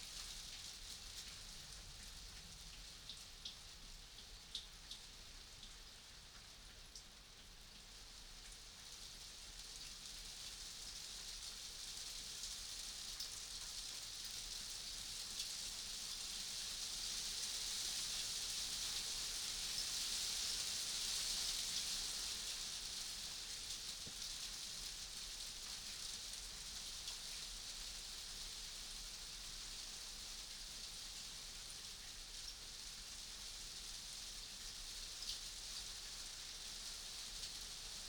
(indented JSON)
{
  "title": "Luttons, UK - hail on a greenhouse ...",
  "date": "2017-04-26 10:00:00",
  "description": "Passing hail showers on a greenhouse ... recorded inside with a dummy head ... bird song and passing traffic ...",
  "latitude": "54.12",
  "longitude": "-0.54",
  "altitude": "76",
  "timezone": "Europe/London"
}